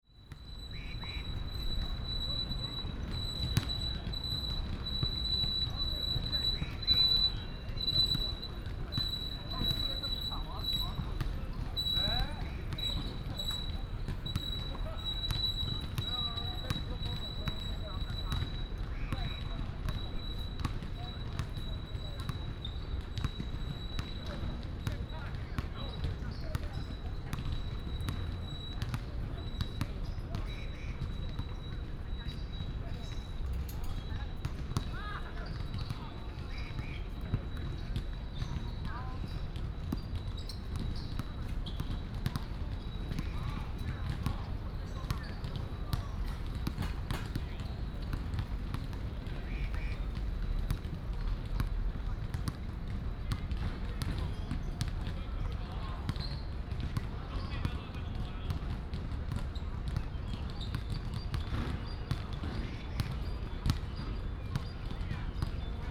Banyan Ave., National Taiwan University - Bird and Basketball sounds
At the university, Next to the stadium, Chirp, Bicycle sound, Basketball court